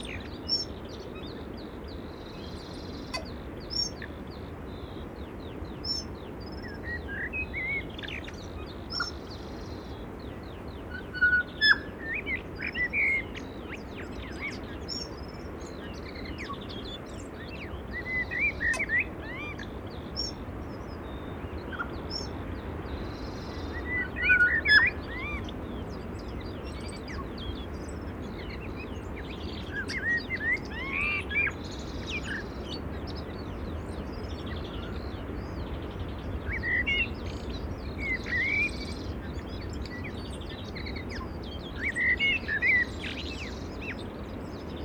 Bethells Beach, New Zealand - Dunes
6 September